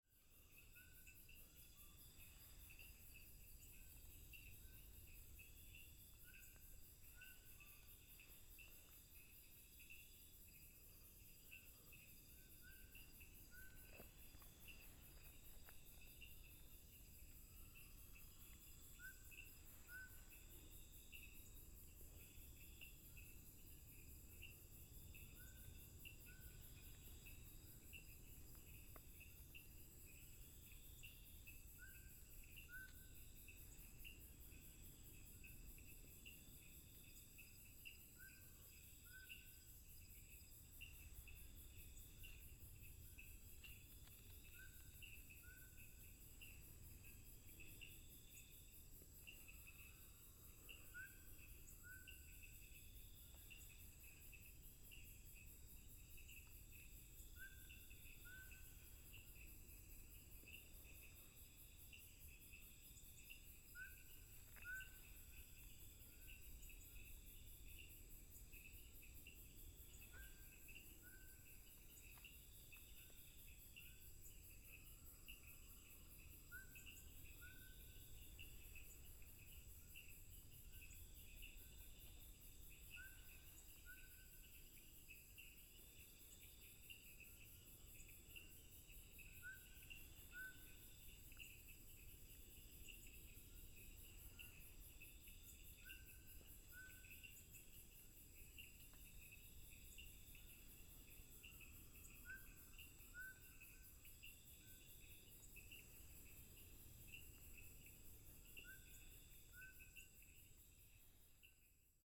內文村, Mudan Township, Pingtung County - Night mountains
Night mountains, Traffic sound, birds sound, Insect cry, Frog croak
Mudan Township, 199縣道